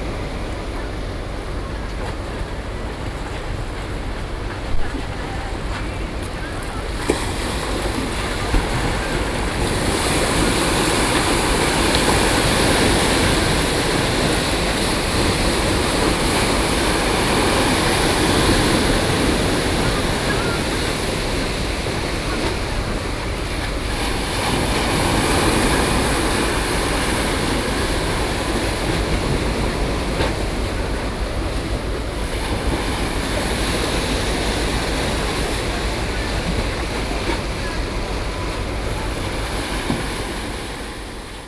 Jinshan, New Taipei City - Waves
11 July 2012, 08:12